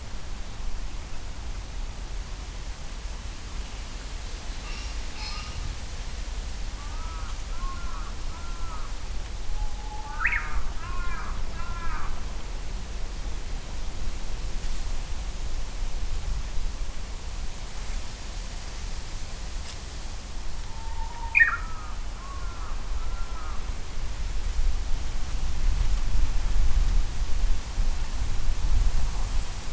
{
  "title": "Ichimiyake Yasu-shi, Shiga-ken, Japan - Japanese bush warbler",
  "date": "2017-06-04 15:15:00",
  "description": "Japanese bush warbler (uguisu, 鶯), pheasant (kiji, キジ), crow (karasu, カラス), and traffic sounds recorded on a Sunday afternoon with a Sony PCM-M10 recorder and Micbooster Clippy EM172 stereo mics attached to a bicycle handlebar bag.",
  "latitude": "35.08",
  "longitude": "136.01",
  "altitude": "105",
  "timezone": "Asia/Tokyo"
}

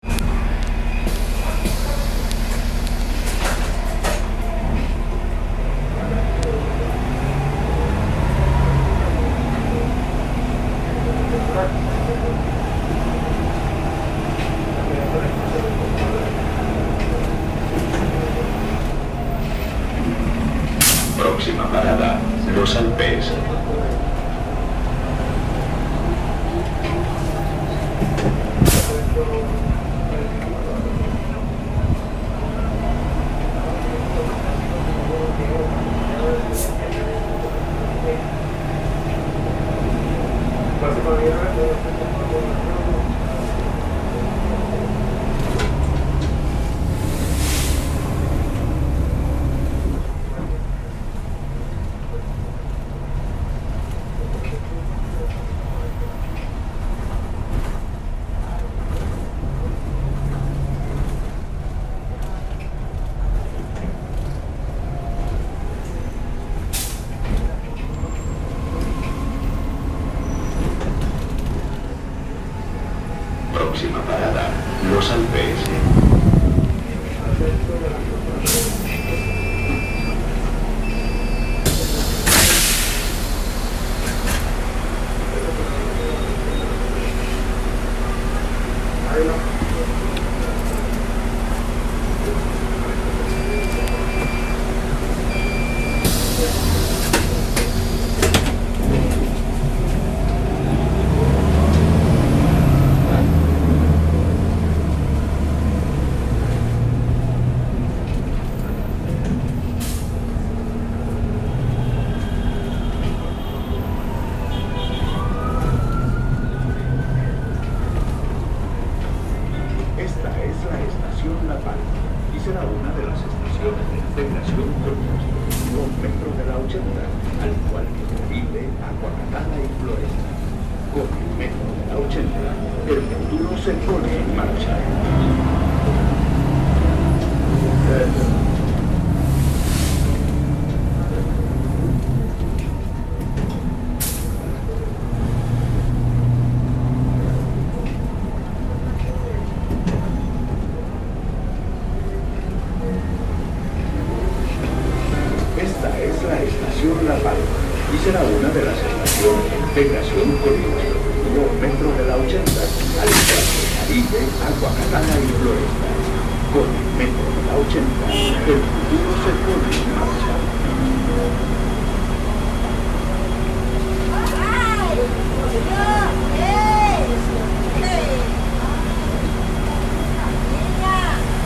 {
  "title": "U de M, Medellín, Antioquia, Colombia - AMBIENTE METROPLUS ESTACIÓN UDEM - LA PALMA",
  "date": "2021-11-08 12:17:00",
  "description": "FECHA: 8 NOV. 2021\nHORA: 12:17 PM\nCOORDENADAS: 6.231042, -75.609432\nDIRECCIÓN: Av. Universidad de Medellín, Medellín, Antioquia\nDESCRIPCIÓN: SONIDO AMBIENTE METROPLUS ESTACIÓN UDEM - LA PALMA\nSONIDO TONICO: carros, buses, puertas abrirse\nSEÑAL SONORA: Voces, voz del metroplus, pitos\nTÉCNICA: Microfono celular stereo\nFRECUENCIA DE MUESTREO: 48OOOhz\nTiempo: 3:02\nINTEGRANTES: Juan José González - Isabel Mendoza - Stiven Lopez Villa - Manuela Gallego",
  "latitude": "6.23",
  "longitude": "-75.61",
  "altitude": "1561",
  "timezone": "America/Bogota"
}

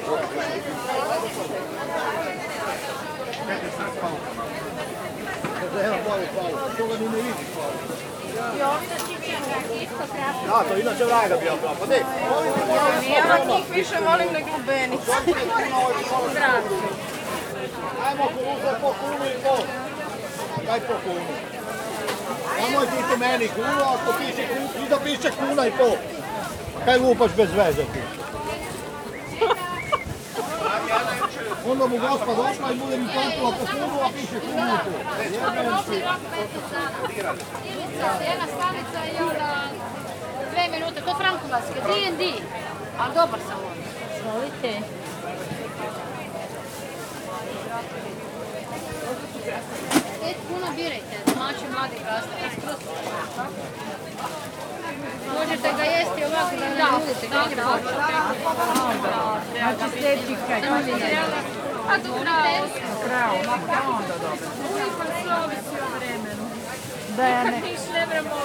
{
  "title": "Market Dolac, Zagreb: La joie de vivre",
  "date": "2010-07-24 13:30:00",
  "description": "voices from vicinity, socialization thanks to fruits&vegetables",
  "latitude": "45.81",
  "longitude": "15.98",
  "altitude": "136",
  "timezone": "Europe/Zagreb"
}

